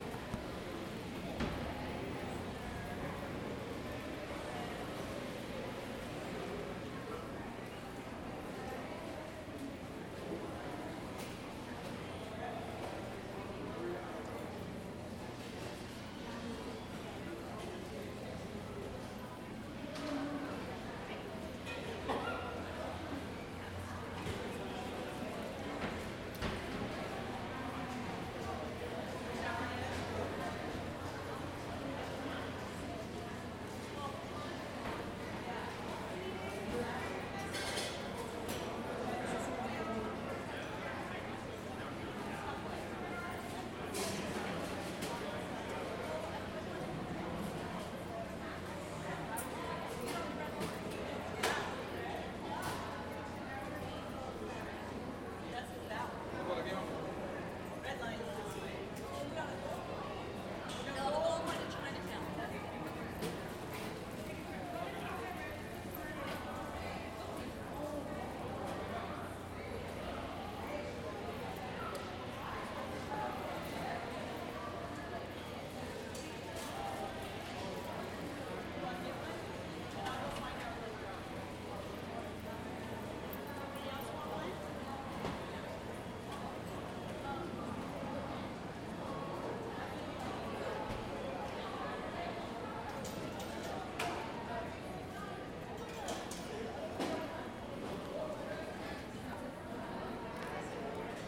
Civic Center / Little Tokyo, Los Angeles, Kalifornien, USA - LA - union station, big hall
LA - union station, big hall; passengers and customers passing by, announcements;
CA, USA, 24 January 2014